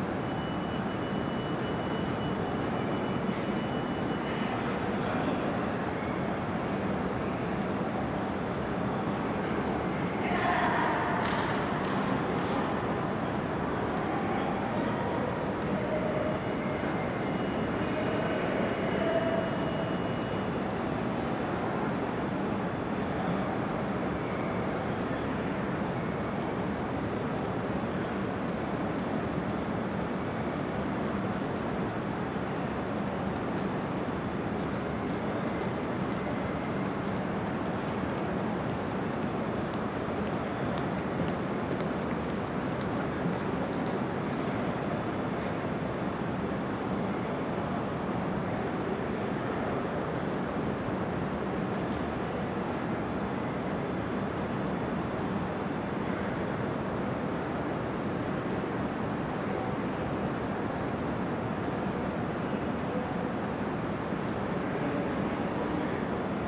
MetLife Building, Park Ave, New York, NY, USA - Ground floor lobby of MetLife Building
Sounds from the spacious ground floor lobby of MetLife Building.
Recorded at night, mostly empty, only the security guards are heard.
United States, 5 April 2022, 23:05